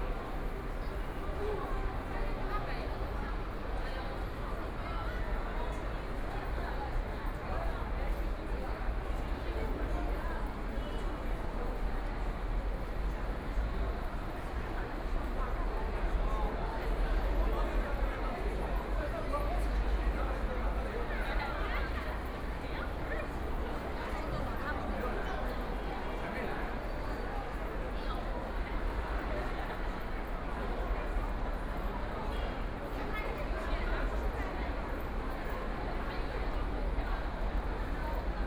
Wujiaochang, Shanghai - Walking in the shopping mall
From the Plaza to the underground mall department stores, The crowd, Binaural recording, Zoom H6+ Soundman OKM II
22 November 2013, 18:40